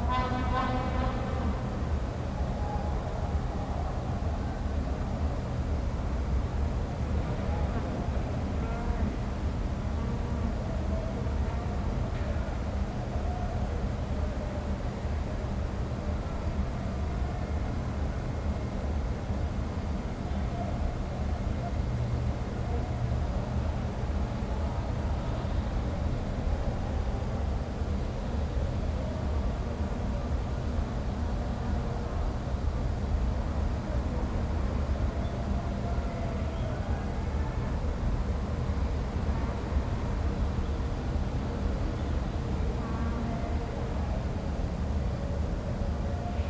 Listening to the city from the 16th floor of Anstey’s building, Saturday night…
from the playlist: Seven City Soundscapes:
Johannesburg, South Africa, 13 March 2011